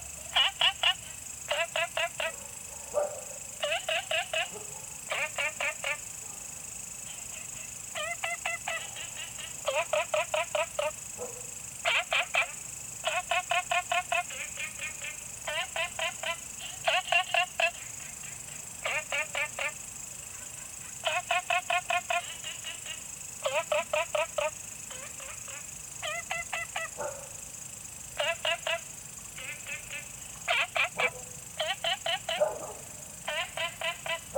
青蛙ㄚ 婆的家, Taomi Ln., Puli Township - Frog chirping and Insect sounds
Frogs chirping, Small ecological pool, Insect sounds, Dogs barking
Zoom H2n MS+XY